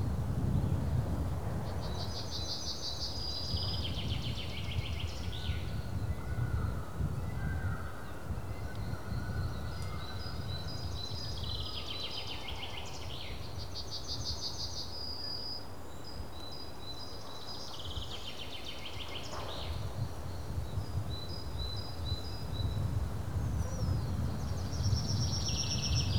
Morasko, Poligonowa Road - forest regularities
when listened to carefully despite the first impression of chaotic sound structure turns out to be rather organized. most birds sing in regular intervals.